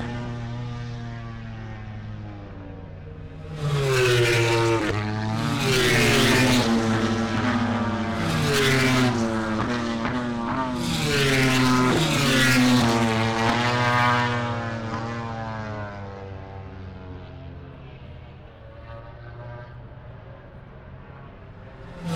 Silverstone Circuit, Towcester, UK - british motorcycle grand prix ... 2021
moto grand prix qualifying two ... wellington straight ... dpa 4060s to MixPre3 ...
28 August 2021, ~15:00